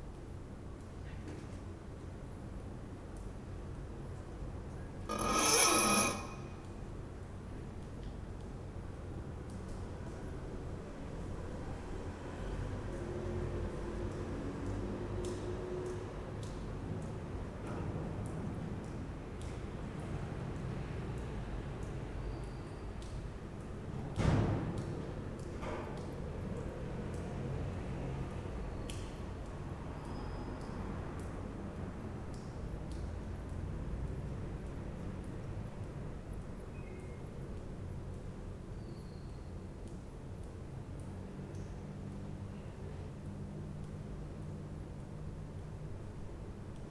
genovas interior cour by night